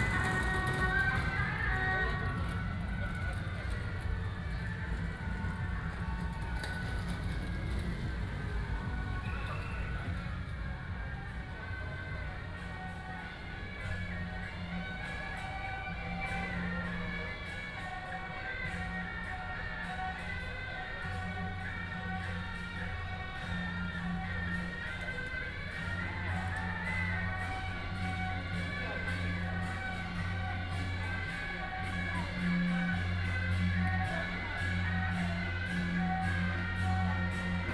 Zhongzheng Rd., Tamsui Dist., New Taipei City - temple fair
temple fair, Firecrackers sound
Tamsui District, New Taipei City, Taiwan, 16 April 2017